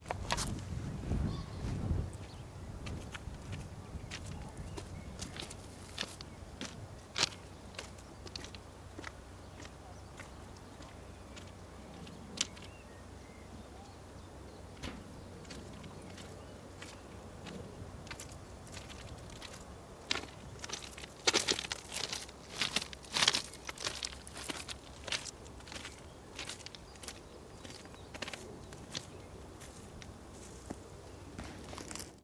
walking along the tracks, Muggenhof/Nuremberg
Nuremberg, Germany, 14 April 2011, ~16:00